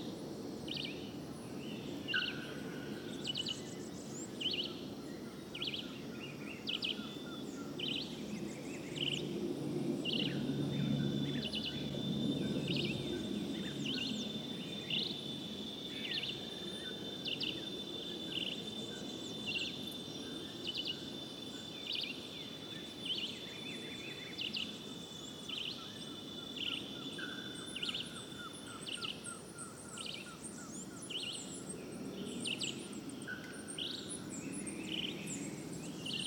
An overview of the endless city that is engulfing the forest...